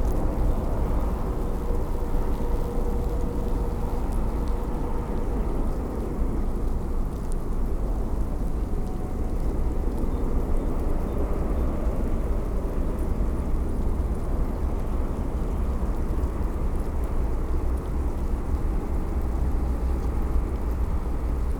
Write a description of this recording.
microphones close to busy anthill (Formica rufa), evening rush hour, traffic noise from nearby Berliner Autobahnring A10, helicopter. A strange mixture. (Tascam DR-100 MKIII, DPA4060)